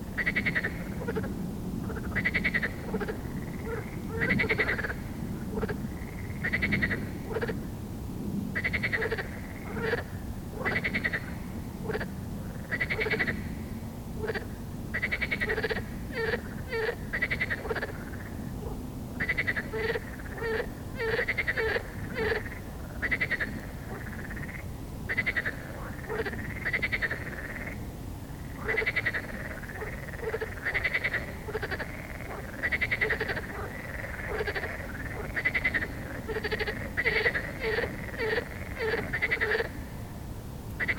Nieuwendammerdijk en Buiksloterdijk, Amsterdam, Netherlands - The beautiful frog song
We came home from a lovely meal in Amsterdam and, as it was a nice warm evening, decided to take a stroll around the neighbourhood. Not far from our place, we heard this amazing sound, so I ran back to get my recorder, and Mark and I stood for a good 20 minutes or so listening to the frogs and all their awesome voices. Recorded with EDIROL R-09 onboard mics, sorry it's a bit hissy.